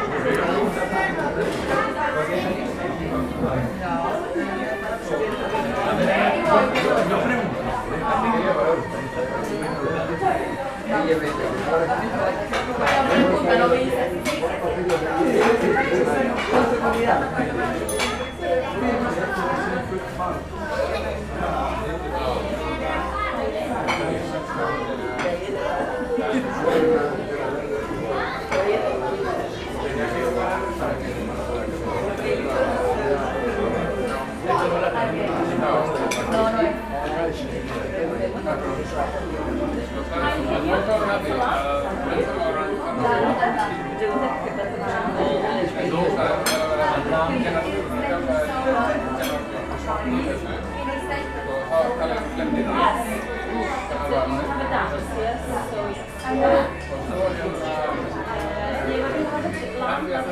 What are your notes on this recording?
Tea and chocolate cake for $17, hmm, tourism has indeed fucked Iceland up these days! Waiting for Palli to show up for our first f2f convo in the 20 years of knowing each other! Networking!